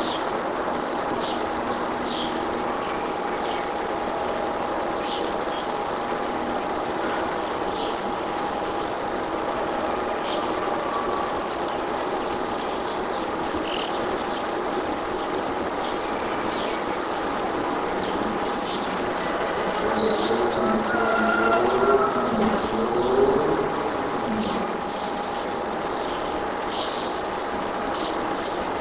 Recorder placed in front of my window.
Girardot, Cundinamarca, Colombia, 12 May 2012